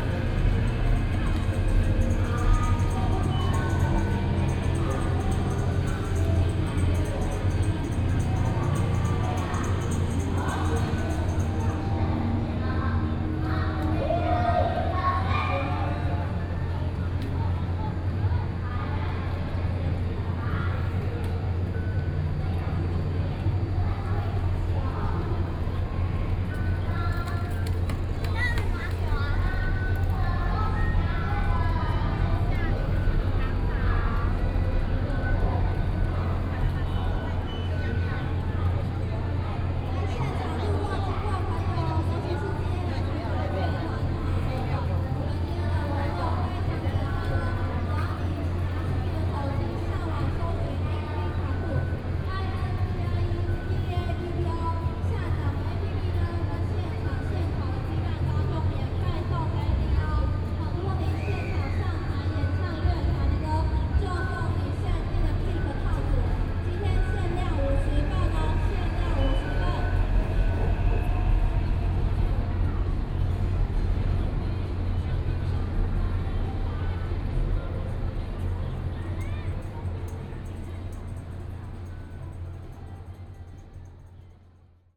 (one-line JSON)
{"title": "Yuanshan Station, Taipei - Holiday", "date": "2013-11-02 14:26:00", "description": "Standing outside the station, Wall next to the station, Came the voice from the station hall, Above the sound of the train arrival and departure, There is the sound of distant Markets Activities, Binaural recordings, Sony PCM D50 + Soundman OKM II", "latitude": "25.07", "longitude": "121.52", "altitude": "9", "timezone": "Asia/Taipei"}